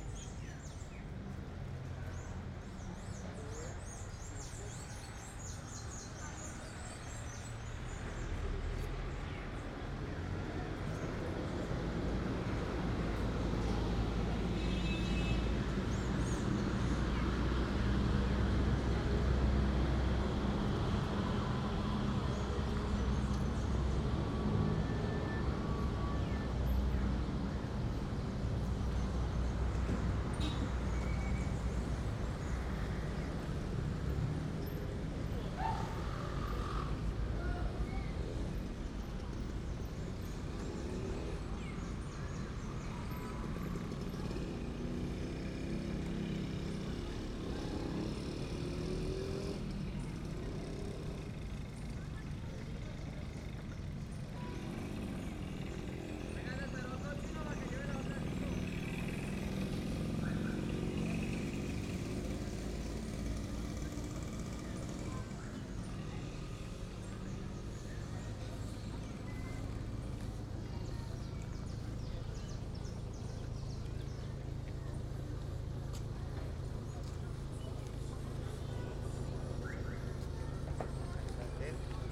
Apulo, Cundinamarca, Colombia - Apulo central park
Sound walk around the central park. Recorded the morning after the local feasts. Tense calm, asleep town. Recorded in motion with two mic capsules placed in a headphones set